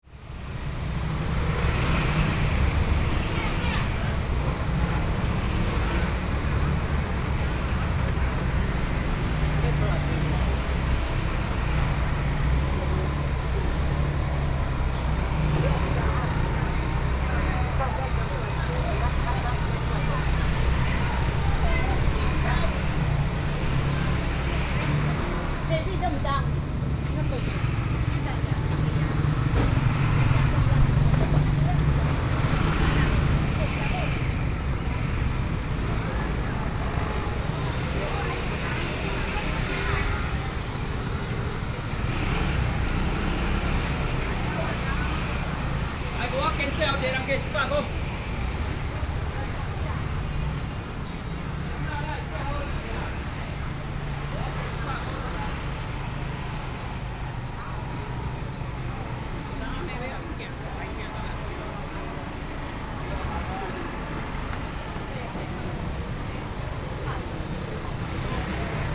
Zhongzheng St., 羅東鎮集祥里 - the traditional market
Walking through the traditional market, Traffic Sound
Sony PCM D50+ Soundman OKM II
Yilan County, Taiwan